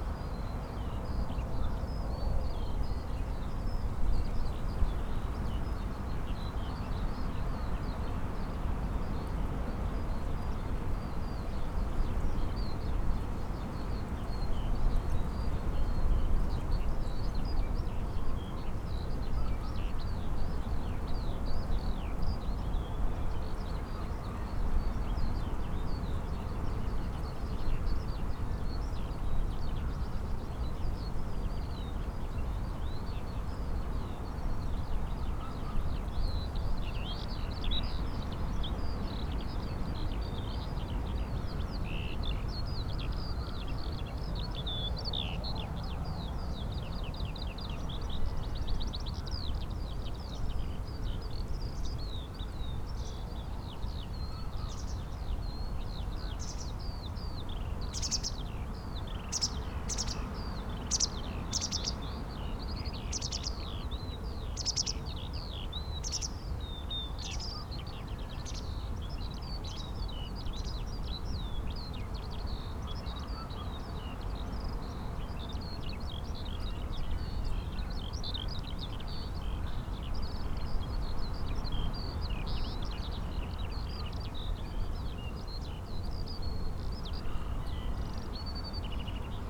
Unnamed Road, Malton, UK - muck heap soundscape ...
muck heap soundscape ... pre-amplified mics in SASS ... bird calls ... song ... pied wagtail ... skylark ... carrion crow ... chaffinch ... large muck heap in field waiting to be spread ...
20 March 2019, 05:45